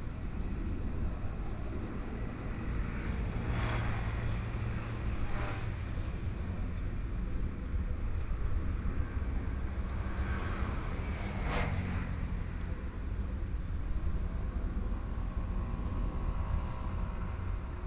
內湖區湖濱里, Taipei City - Sitting next to park
Sitting next to park, Traffic Sound, Distant school students are practicing traditional musical instruments
Binaural recordings, Sony PCM D100 + Soundman OKM II